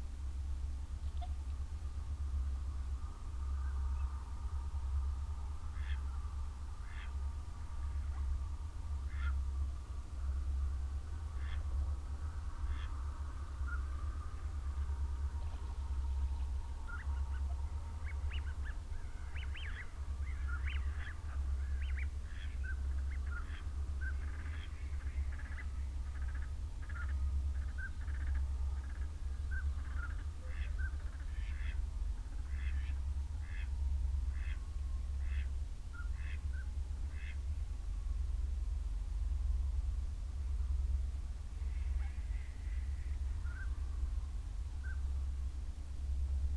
Dumfries, UK - whooper swan soundscape
whooper swan soundscape ... dummy head with binaural in the ear luhd mics to zoom ls14 ... bird calls from ... canada geese ... shoveler ... snipe ... teal ... wigeon ... mallard ... time edited unattended extended recording ...